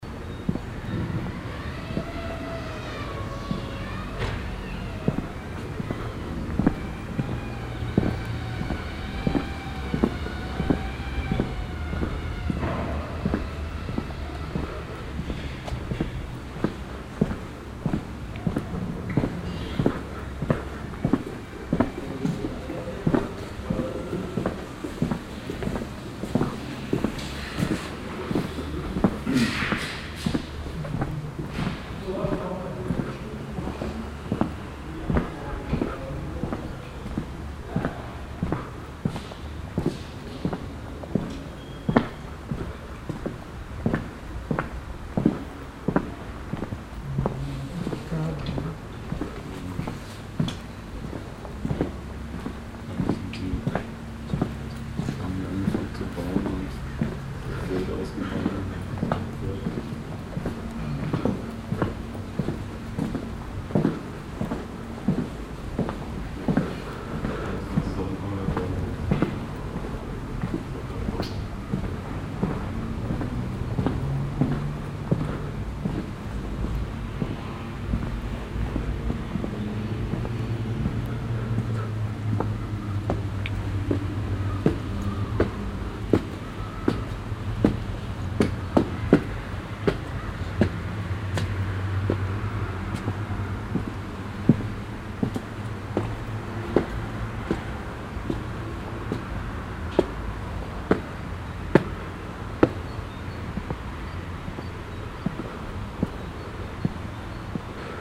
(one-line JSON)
{"title": "velbert, langenberg, donnerstrasse, gang durch altstadt", "description": "gang durch die altstadt von langenberg, mittags, kofsteinpflaster, enge gassen\nstarker an- und abstieg\nsoundmap nrw: social ambiences/ listen to the people - in & outdoor nearfield recordings", "latitude": "51.35", "longitude": "7.12", "altitude": "118", "timezone": "GMT+1"}